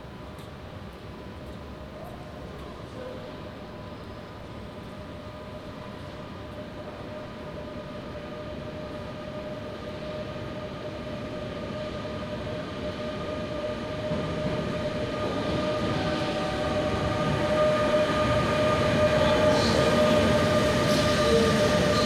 Binaural recording made on a platform at Train station Hollands Spoor, The Hague.
The Hague, The Netherlands